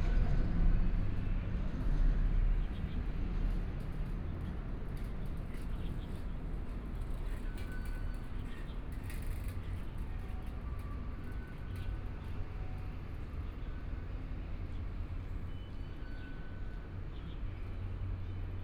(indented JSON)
{
  "title": "Yuanshan Station, Zhongshan District - Outside MRT",
  "date": "2014-01-20 09:57:00",
  "description": "Environmental sounds, Aircraft traveling through, MRT train stop away from the station and, Binaural recordings, Zoom H4n+ Soundman OKM II",
  "latitude": "25.07",
  "longitude": "121.52",
  "timezone": "Asia/Taipei"
}